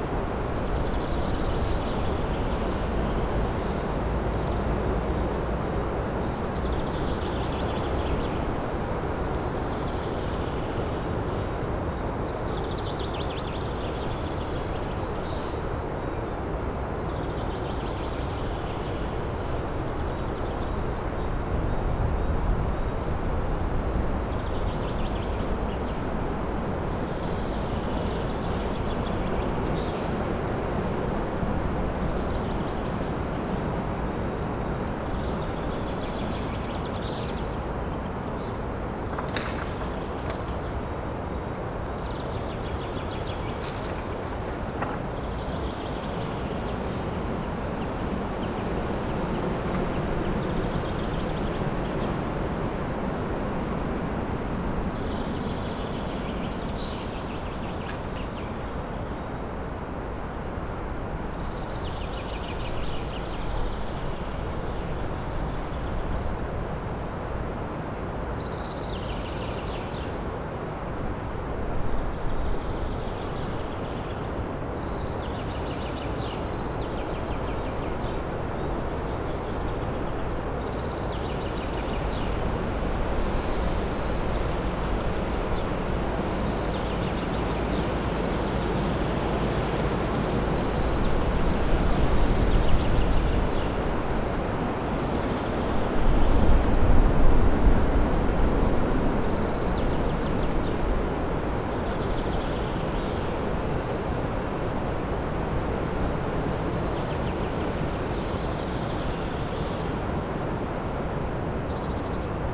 Morasko Nature Reserve, forest clearing - april pressure
forest clearing submerged in the sound of strong wind whirring in the trees. withered leaves being blown around by the stronger gusts. some unsettling creaks nearby, thought it's a wild boar for a second.
Morasko Meteorite Nature Reserve project
Suchy Las, Poland, 13 April 2015, 11:30